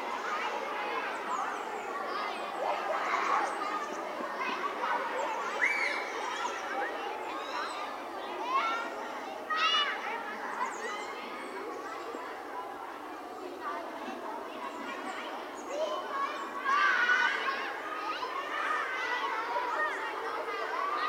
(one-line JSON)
{"title": "Hofmannstraße, Dresden, Deutschland - Bundesweiter Probealarmtag Sierene und Schulhof mit Kindern", "date": "2020-09-10 11:00:00", "description": "Schulhof mit Kindern\nBundesweiter Probealarm 11:00 Sierene auf Schuldach und andere im Hintergrund", "latitude": "51.04", "longitude": "13.81", "altitude": "119", "timezone": "Europe/Berlin"}